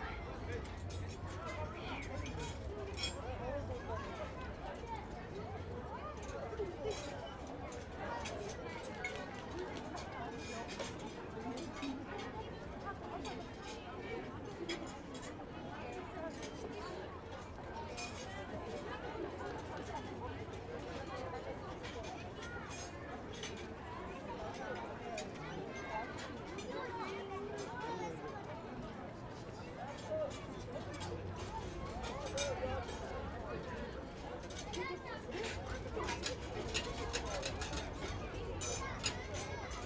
Khoroo, Ulaanbaatar, Mongolei - chain carousel
nothing to add, they take place it starts, stops, the children go away - recorded in stereo with a sony microphone
Border Ulan Bator - Töv, Монгол улс, 1 June 2013